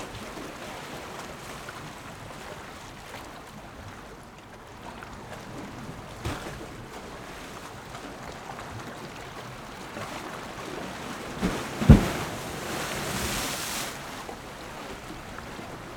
風櫃洞, Magong City - Wave
Wave, Next to the rock cave
Zoom H6 + Rode NT4
23 October 2014, ~15:00